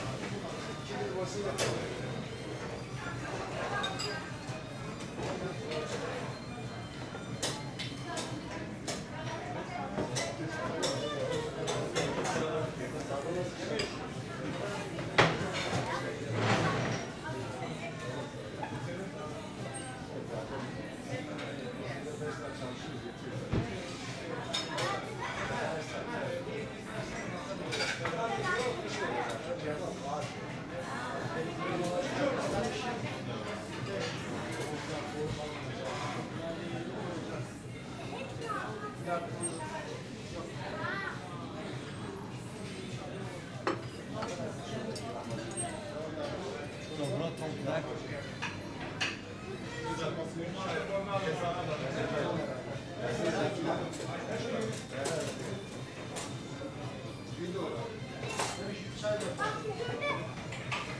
Köln Mülheim, Keupstr. - Kilim Restaurant
place revisited, 1 soup, 1 Lahmacun, as good as always...
(Sony PCM D50, Primo Em172)
Köln, Deutschland, 22 August